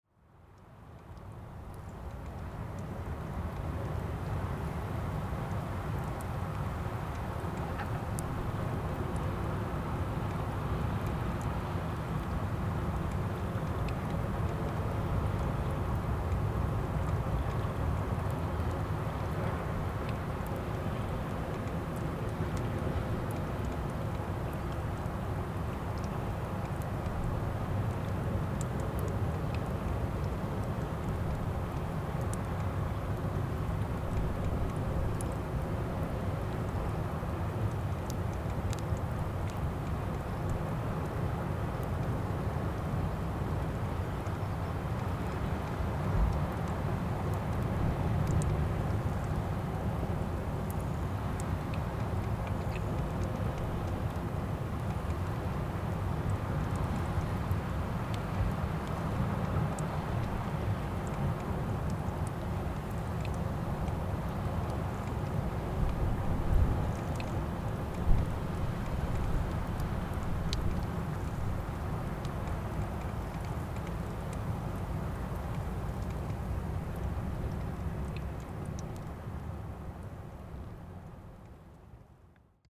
île Percée, Moëlan-sur-Mer, France - Ile Percée NW
Little animals in the water and rocks, Zoom H6